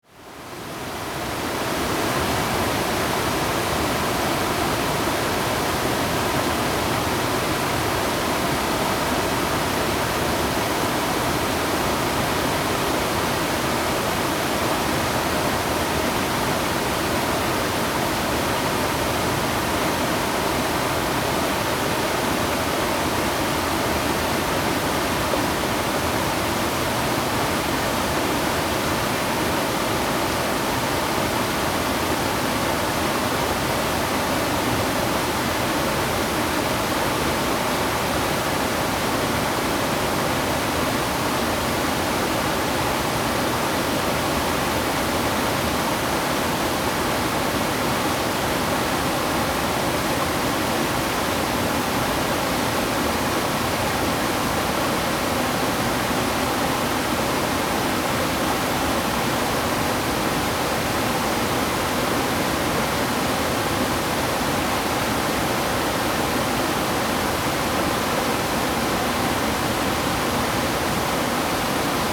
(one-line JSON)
{"title": "夢谷瀑布, 仁愛鄉, Taiwan - Waterfall and Stream", "date": "2016-12-13 10:21:00", "description": "stream, waterfall\nZoom H2n MS+ XY", "latitude": "24.02", "longitude": "121.09", "altitude": "831", "timezone": "GMT+1"}